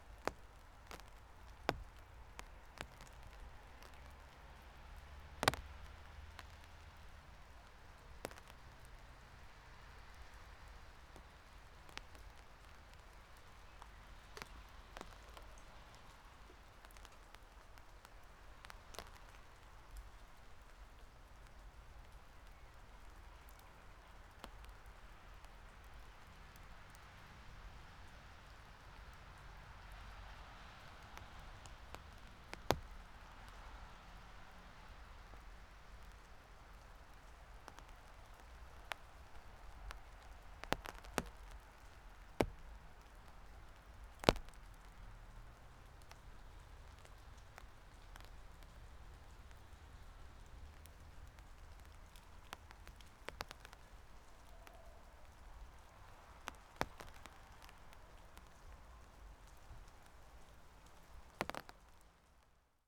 Piatkowo district, path to Marysienki alotments - umbrella at work
rain drops falling from leaves on my umbrella. all kinds of rain sounds. gentle swoosh, small drops, fat drops, trickles, water gurgling in a drain. damp air carries sounds somewhat differently.
województwo wielkopolskie, Polska, European Union